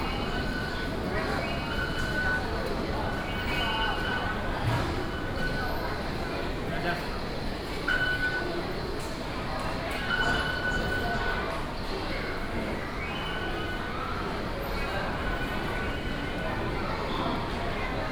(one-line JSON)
{"title": "New Taipei City, Taiwan - in the station underground hall", "date": "2015-09-19 20:44:00", "description": "Start walking from the square, To the station underground hall, Go to the MRT station", "latitude": "25.01", "longitude": "121.46", "altitude": "20", "timezone": "Asia/Taipei"}